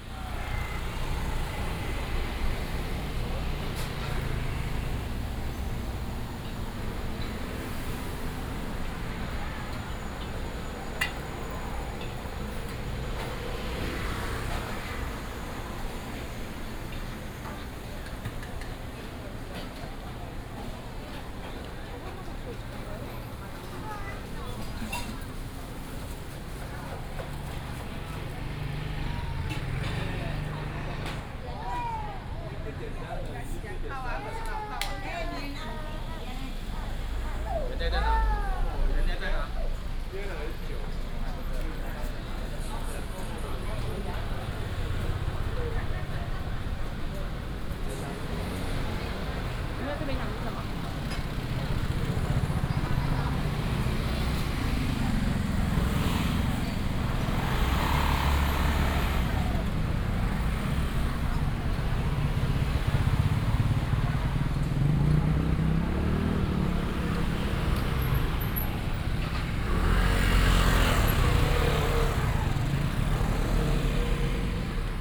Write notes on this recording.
In the Night Market, Traffic sound, local dishes, Binaural recordings, Sony PCM D100+ Soundman OKM II